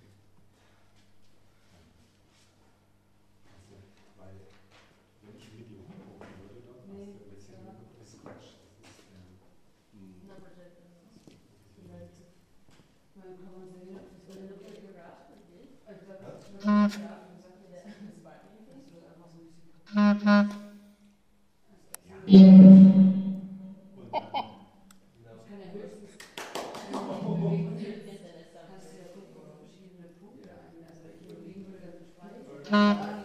Berlin-Pankow, Berlin, Deutschland - atelier
Reahearsal "Gruenanlage / Nora Volkova" about to commence.
Berlin, Germany, 12 January